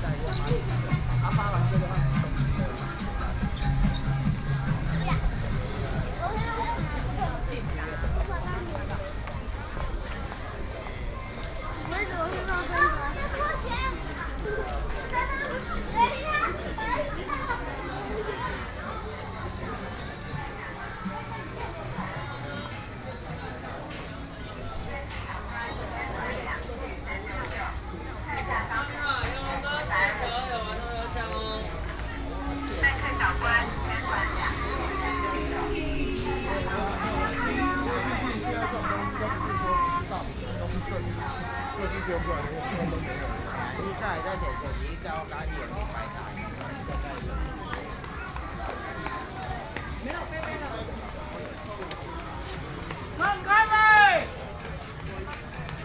Xinzhuang Rd., Xinzhuang Dist., New Taipei City - SoundWalk
Xinzhuang District, New Taipei City, Taiwan, 1 November 2012, ~21:00